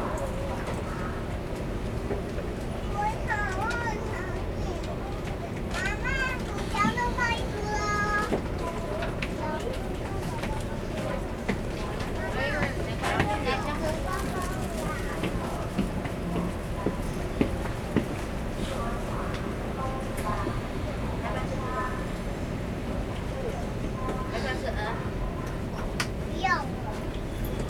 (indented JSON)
{
  "title": "Zhunan, Miaoli - inside the Trains",
  "date": "2012-02-01 21:23:00",
  "latitude": "24.68",
  "longitude": "120.88",
  "altitude": "7",
  "timezone": "Asia/Taipei"
}